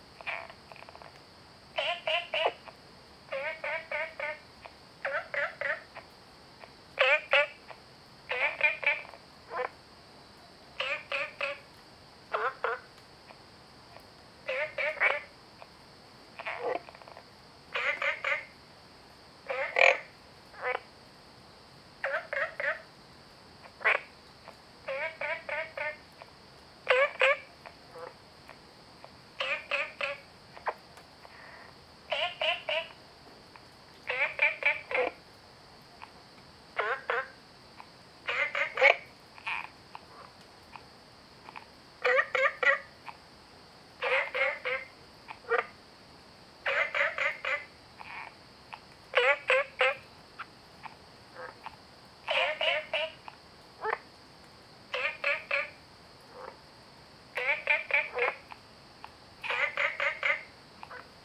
woody house, 埔里鎮桃米里 - Frogs chirping
Frogs chirping, Ecological pool
Zoom H2n MS+XY